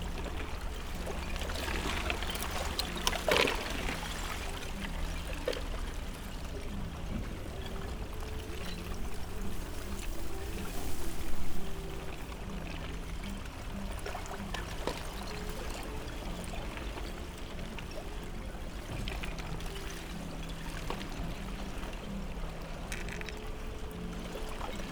공지천 얼음 끝에서 floating ice edge ２１年１２月３１日
공지천 얼음 끝에서_floating ice edge_２１年１２月３１日